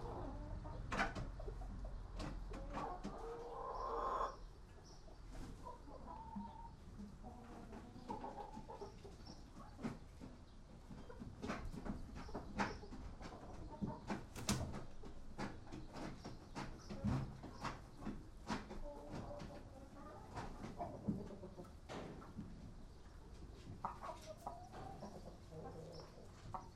WLD, Maybury State Park chicken coop
MI, USA